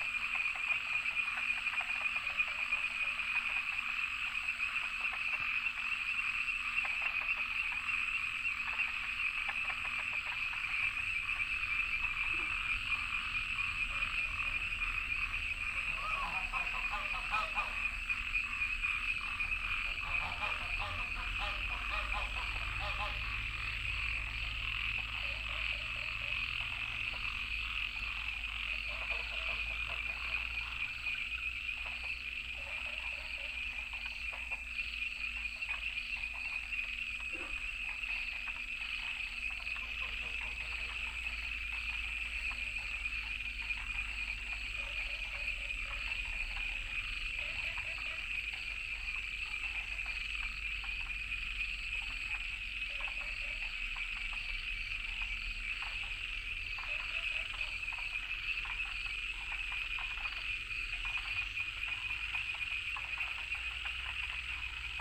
Shuishang Ln., Puli Township, Nantou County - Various frogs chirping
Frogs chirping, Ecological pool, Various frogs chirping, Goose calls
Puli Township, 華龍巷164號